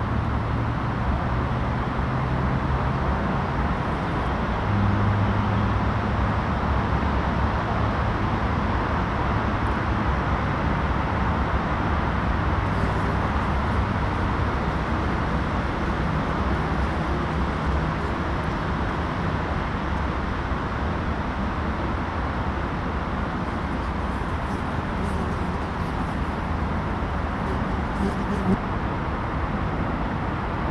Heinz-Nixdorf-Ring, Paderborn, Deutschland - Hoepperteich ueber Wasser
My ministry
for you
says the place
is this:
There is a nest
in the middle of
everything
and you can come and go
as you like
as long as you
cry out
to me.